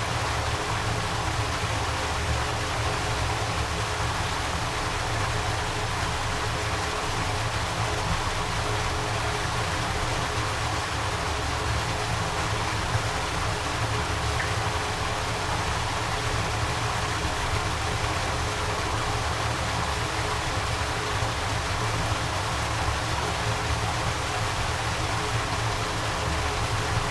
Povilai, Lithuania, the small dam
Small dam at a pond.